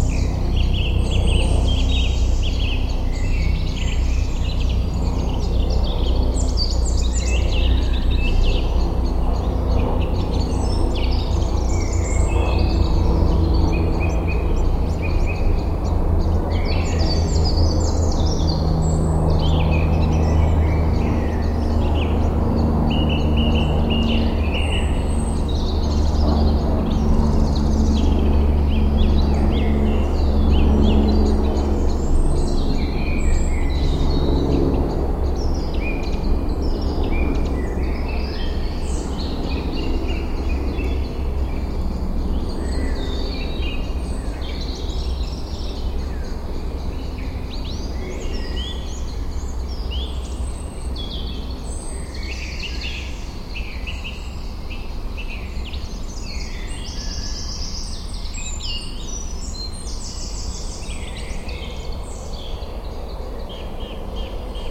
Wik, Kiel, Deutschland - Forest near city
Evening in the forest on the outskirts of Kiel: many birds, a helicopter, one barking dog, some very distant cars, some low frequency rumbling from ships on the nearby Kiel Canal and the omnipresent buzzing of the city and the traffic.
Zoom F4 recorder, two DPA 4060 as stereo couple
Kiel, Germany